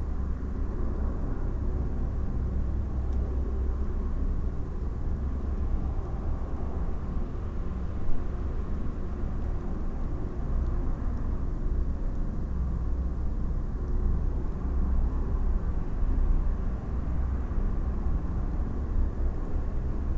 Narkūnai, Lithuania, inside the electric pole
abandoned railway. there was electric power line at it. now some concrete electric poles lay down fallen in the grass. I placed small mics in one of such pole. drizzle rain, distant traffic.
Utenos apskritis, Lietuva, 29 November 2019